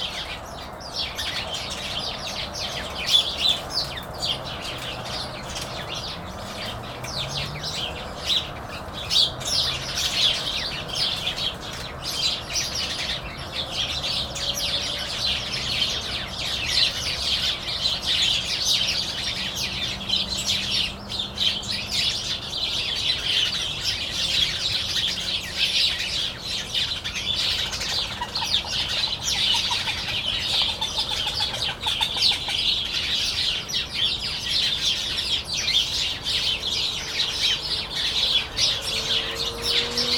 Saint-Pierre-la-Garenne, France - Sparrows
The crazy sparrows are fighting on a tree, like they do every morning !